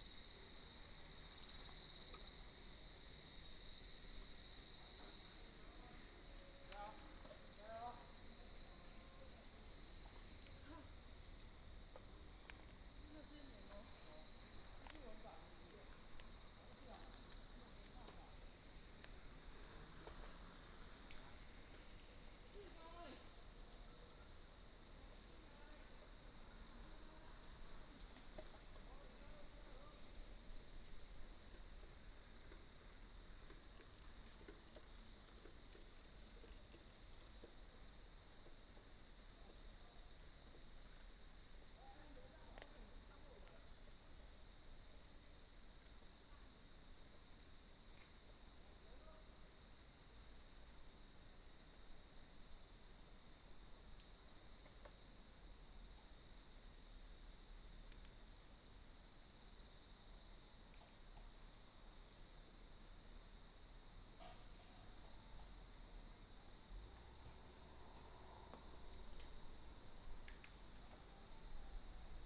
199縣道4K, Mudan Township, Pingtung County - Mountain road
Beside the road, The sound of cicadas, Mountain road, Cycling team, Traffic sound